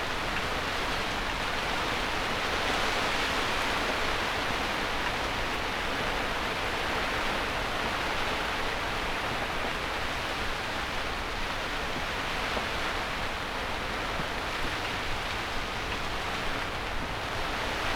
Chapel Fields, Helperthorpe, Malton, UK - inside poly tunnel ... outside stormy weather ... binaural ...
inside poly tunnel ... outside stormy weather ... binaural ... Luhd binaural mics in a binaural dummy head ...